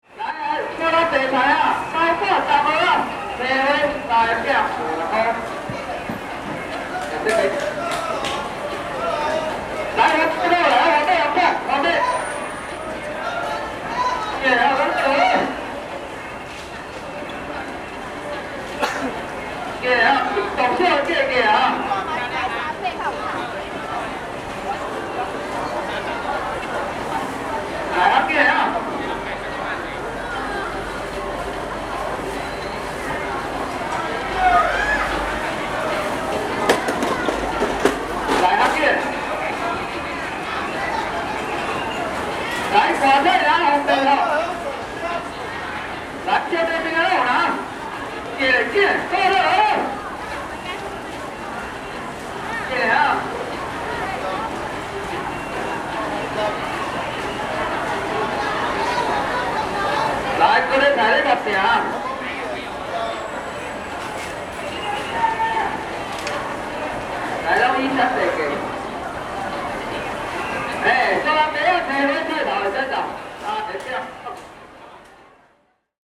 Merchants selling vegetables sound, Sony ECM-MS907, Sony Hi-MD MZ-RH1